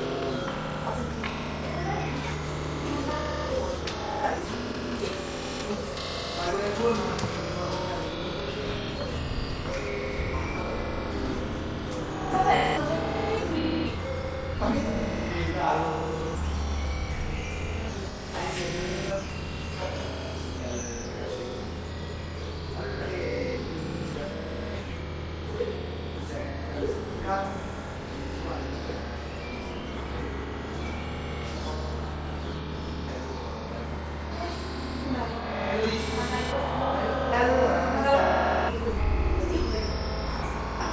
Via O. Caosi, Serra De Conti AN, Italia - Young couple quarreling masked for their privacy
Sony Dr 100. Sorry for editing with a Time Stretch Random plugin (Intelligent Device's Slip & Slide in dual mono mode w/differerent settings) to keep the listeners for understanding their dialogue, for privacy. Place with many swallows flying around.